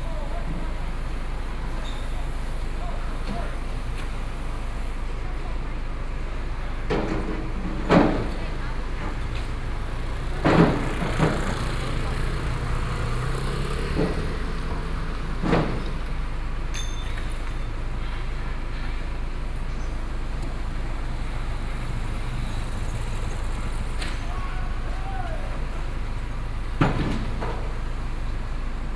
106台灣台北市大安區大學里 - Intersection
Construction noise, Demolition waste transporting bricks, The crowd on the road with the vehicle, Binaural recordings, Sony PCM D50 + Soundman OKM II
Daan District, Taipei City, Taiwan, 28 October 2013